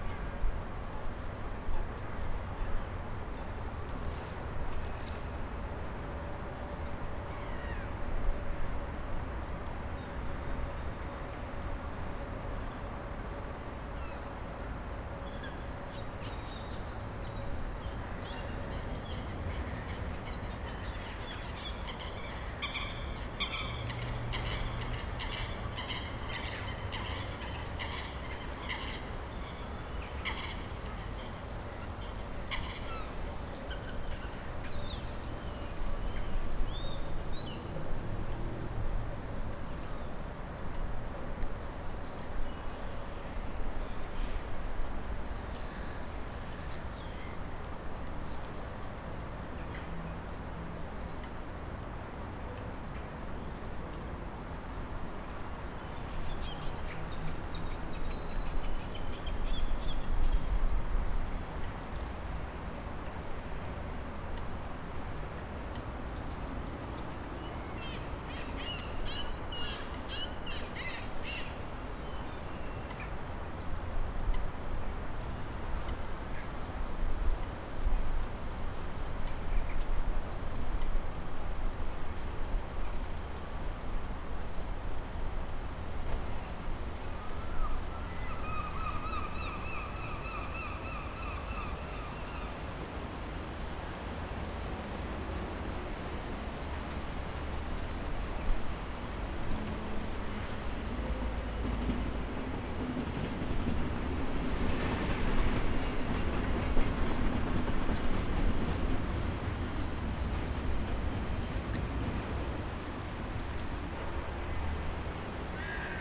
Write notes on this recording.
The Binckhorst Mapping Project